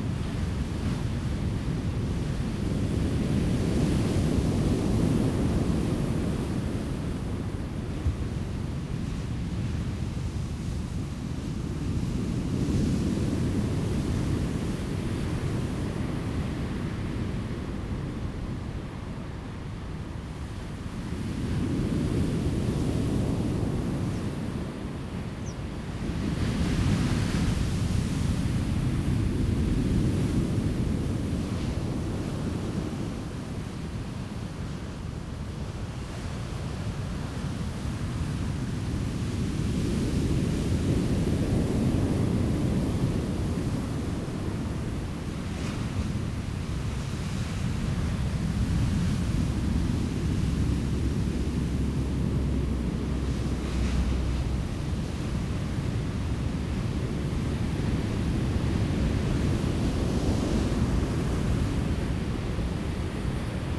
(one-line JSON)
{"title": "八仙洞遊客中心, Changbin Township - sound of the waves", "date": "2014-10-09 10:05:00", "description": "sound of the waves, Wind and waves are great\nZoom H2n MS+XY", "latitude": "23.39", "longitude": "121.48", "altitude": "6", "timezone": "Asia/Taipei"}